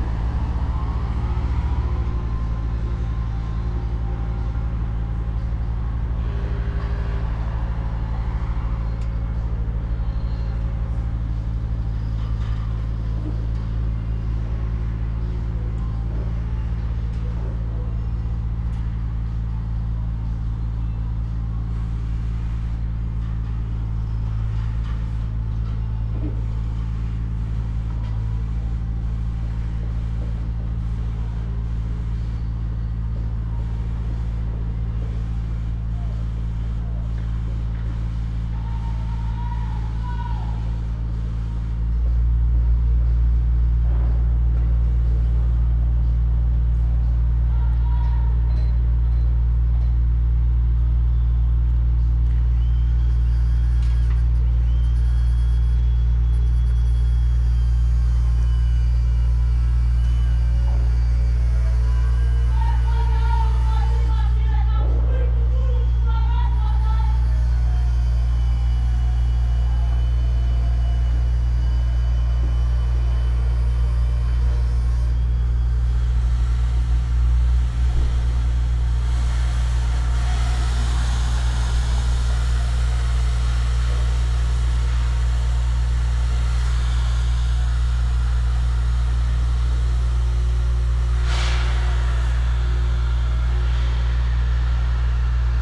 Roman-Herzog-Straße, München, Deutschland - Major Construction Site Freiham
A new district of Munich is being built in Freiham.